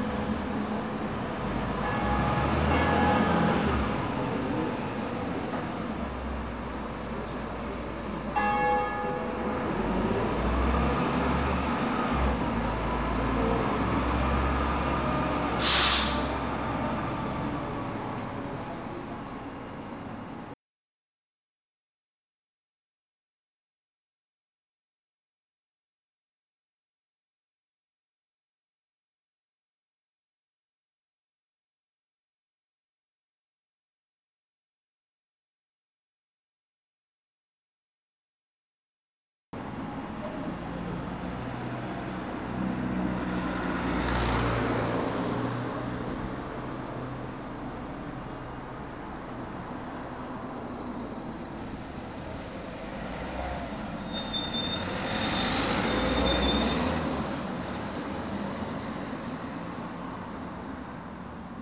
Place du Breuil, Pont-en-Royans, France - Midi à Pont en Royan
center of the village, it is midday the church bell is manifesting itself
Il est midi à Pont en Royan la cloche de l'église se manifeste
7 June